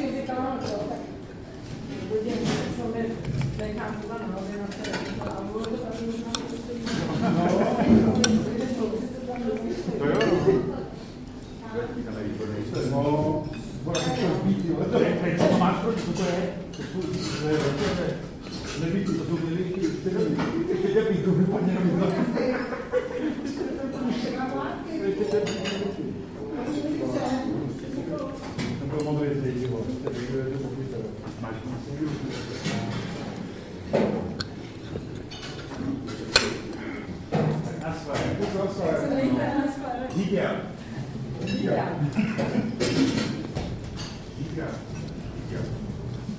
Zenklova street, buffet
At Zenklova street in Liben, there is an old butcher shop and cheap popular meat buffet, where working class comes for lunch.
5 December 2010, 13:54